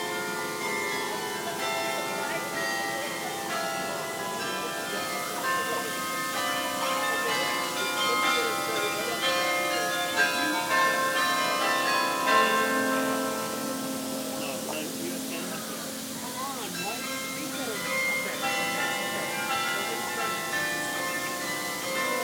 University of California, Berkeley, Berkeley, CA - Campanille chimes
Noone Berkeley time.
Berkeley, CA, USA, 7 August, 12:00